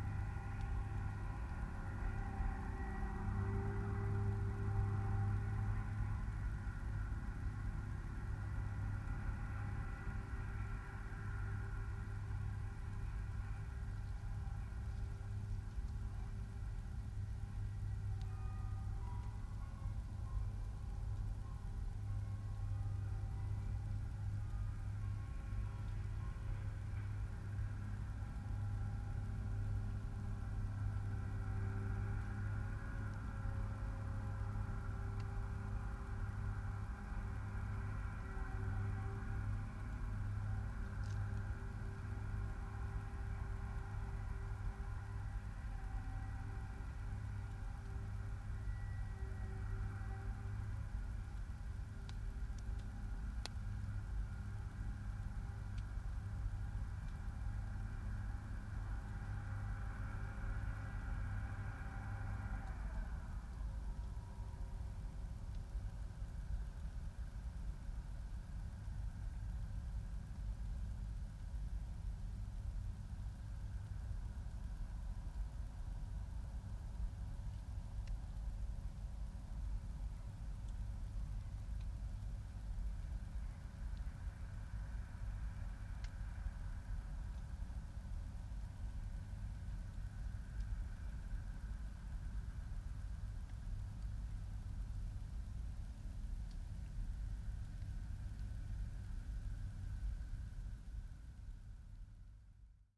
Streaming from a hedgerow in large intensively farmed fields near Halesworth, UK - Railway work hum across the fields in the small hours
Things happen in the night that we know little of. Streaming sometimes reveals them. I like to leave it playing at a low level while I sleep. Maybe this effects my dreams, but sometimes I'm awoken; on this occasion by a fairly loud rather musical hum. It sounded fairly close even though I knew the mics were some distance out in the fields. I got up, opened a window and was surprised to hear the same hum just outside. Intrigued I got dressed and went to explore. It turned out be work on the railway, the droning machine engaged in some heavy repairs in the small hours while trains were stopped. It's sound pervaded the whole landscape, heard by me in town and by the mics in the hedge 1.7km away. As the work moved slowly down the track the town became quiet again, but it remained audible in the fields for considerably longer. A good lesson in acoustic geography and an illustration of the sonic lay lines propagated by air currents and channeled by contours through the surrounding land.